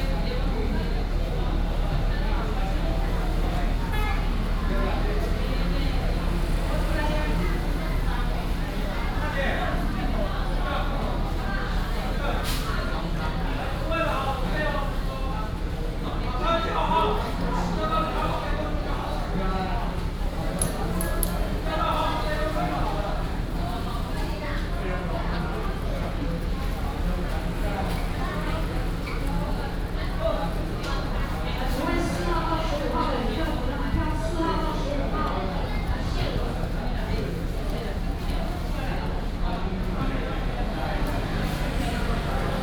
Puli Bus Station, Nantou County - In the bus station hall
bus station, In the station hall